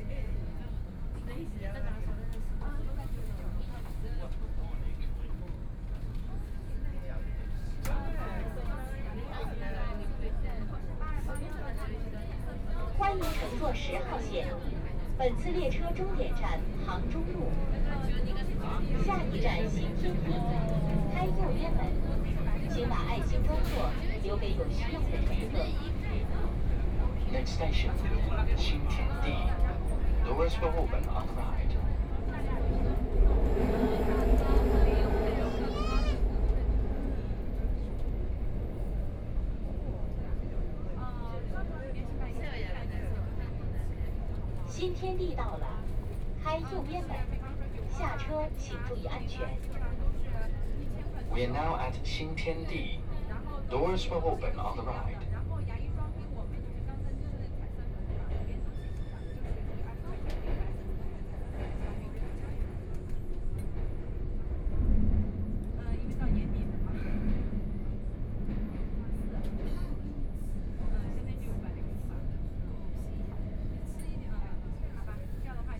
from Yuyuan Garden Station to South Shaanxi Road Station, Binaural recording, Zoom H6+ Soundman OKM II
Huangpu, Shanghai, China